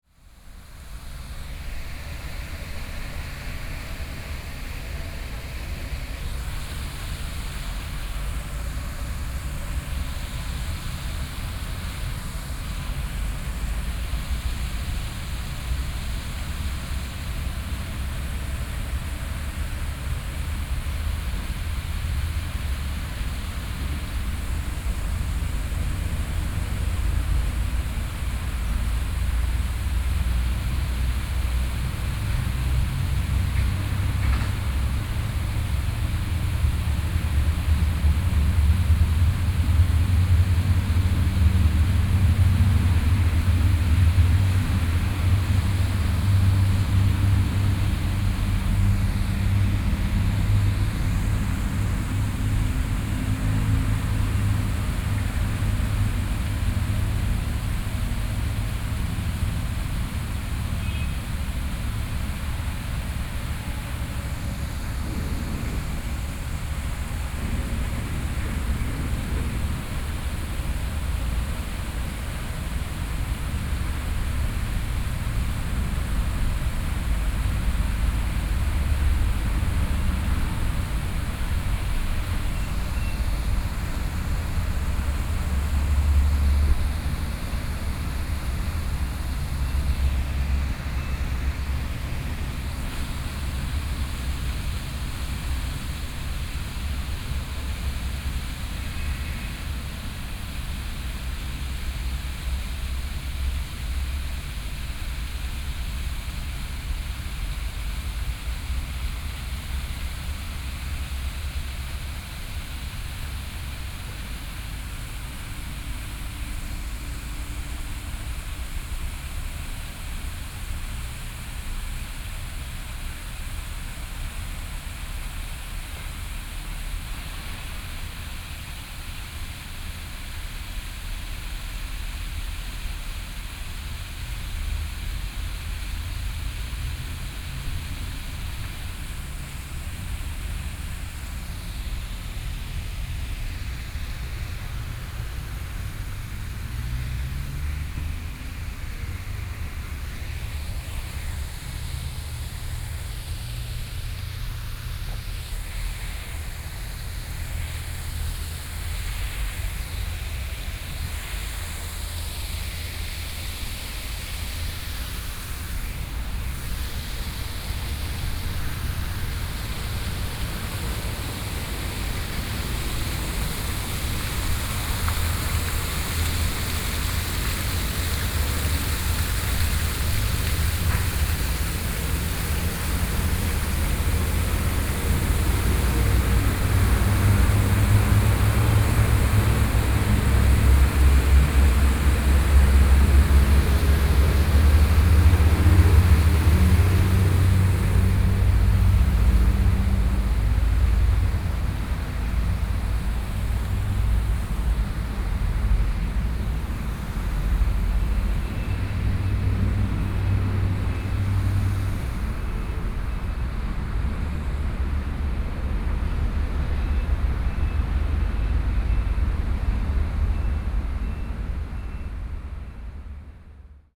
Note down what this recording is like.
Outside the airport, Fountain, Traffic Sound